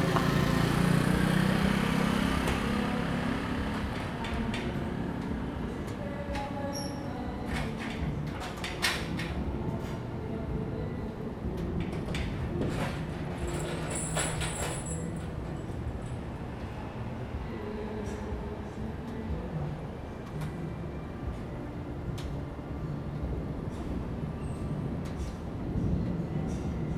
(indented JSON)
{
  "title": "碧潭食堂, Xindian Dist., New Taipei City - At the door of the restaurant",
  "date": "2015-07-28 15:18:00",
  "description": "At the door of the restaurant, Traffic Sound, Thunder, Raindrop sound\nZoom H2n MS+ XY",
  "latitude": "24.96",
  "longitude": "121.53",
  "altitude": "20",
  "timezone": "Asia/Taipei"
}